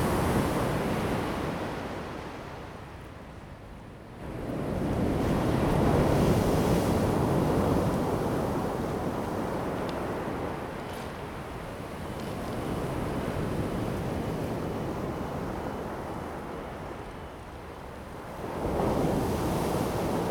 泰和, 太麻里鄉台東縣 - Sound of the waves
At the beach, Sound of the waves
Zoom H2n MS+XY